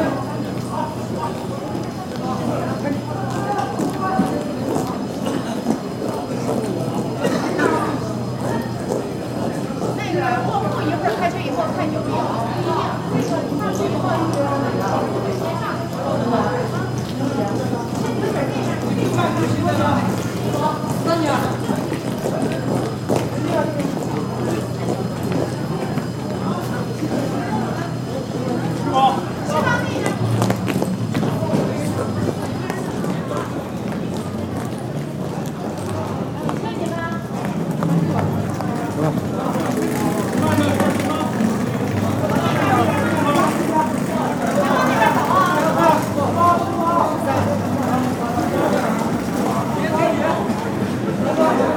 dense travel movements on a platform of the main railway station - luggage transporter within people that rush to the train with their trolleys
international cityscapes - topographic field recordings and social ambiences